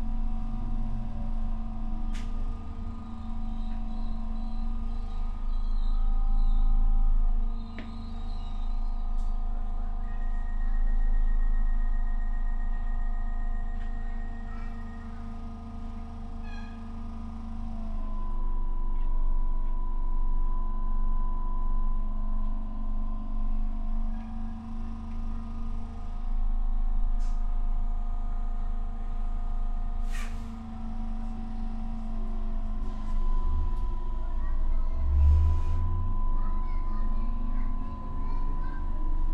Brookwood Rise, Northside, Dublin, Irlande - in the DART going to Howth
Field recording in the DART, Stop Stations, Going to Howth
Recording Gear : Primo EM172 omni (AB) + Mixpre-6
Headphones required